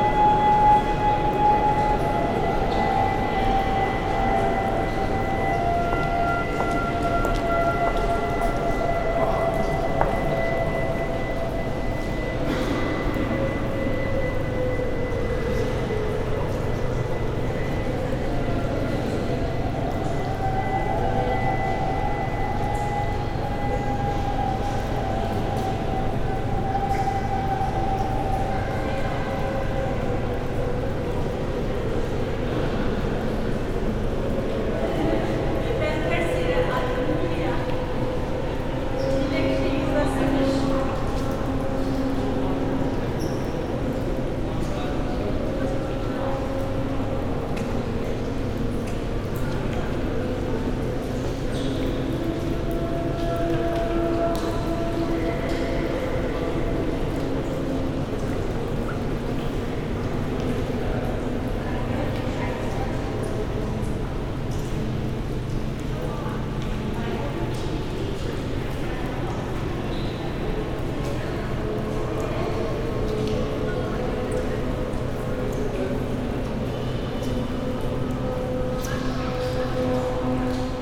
Basilica Cistern or Yerebatan Sarayi, Roman water supply from 532 AD. Unfortunately they play music inside for tourists
İstanbul, Marmara Bölgesi, Türkiye